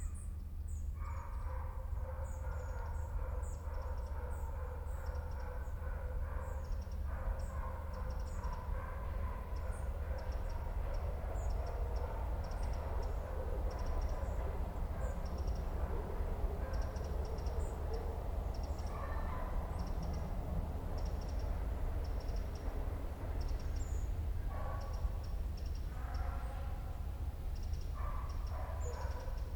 Prague, Czech Republic - Na Cibulce
Soundscape from the park Na Cibulce, water, lake and birds.
Prague-Prague, Czech Republic, 2 August 2012, ~16:00